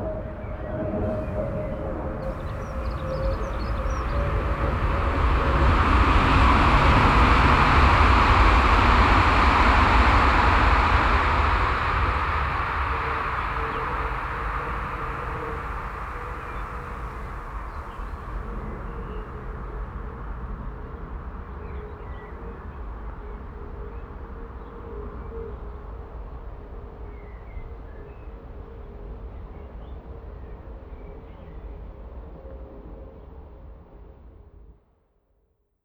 Schönebeck, Essen, Deutschland - essen, schönebeck, train tracks
An den Eisenbahngleisen. Flugzeugüberflug und der Klang vorbeifahrender Züge in der Idylle eines Frühlingsmorgens.
At the railway tracks. A plane passing the sky and the sound of passing by trains.
Projekt - Stadtklang//: Hörorte - topographic field recordings and social ambiences
Essen, Germany